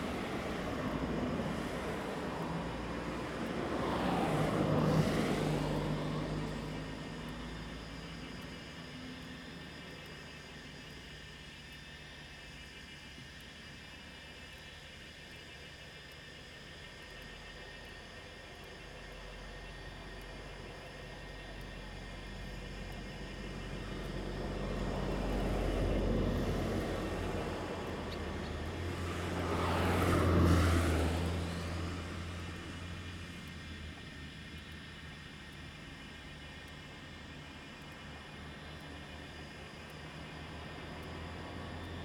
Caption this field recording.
Insects sound, Cicadas sound, Beside the mountain road, Traffic Sound, Very Hot weather, Zoom H2n MS+XY